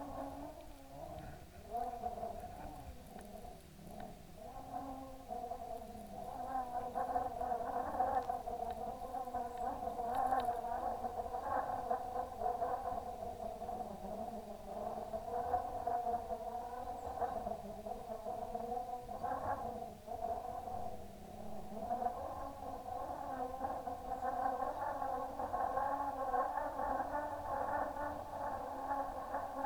Danube Canal Vienna - Riverharp Recording
Approx. 7m of 0.5mm nylon line with 500g metal weight suspended in current from pole. Schaller Oyster piezo pick up as contact mic on small wooden plate connected to string. Recorded with Zoom H1. mono.
28 September 2012, 18:16